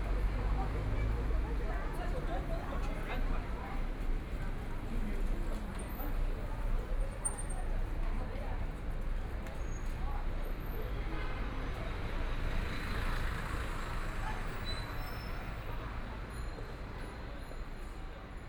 Jingling East Road, Shanghai - in the Street
Walking in the Many musical instrument company, Traffic Sound, Binaural recording, Zoom H6+ Soundman OKM II